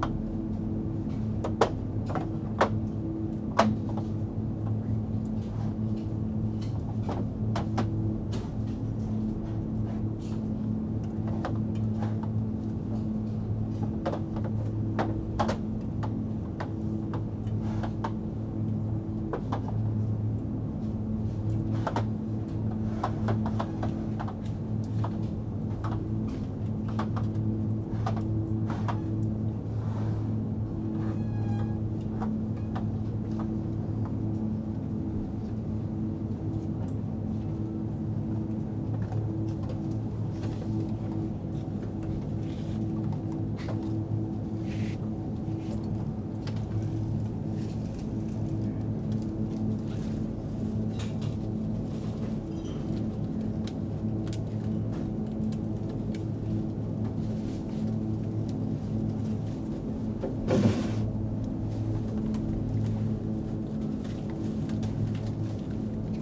Moabit, Berlin, Germany - Mournful supermarket fridge
An unusually musical fridge, especially around the yogurts.
March 2012